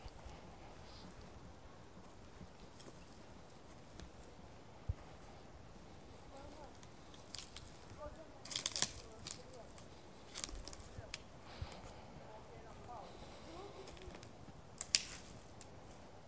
Musapstan Forest Park, Zadar, Croatia
I walk through the woods with my family
Zadarska županija, Hrvatska, 21 March 2020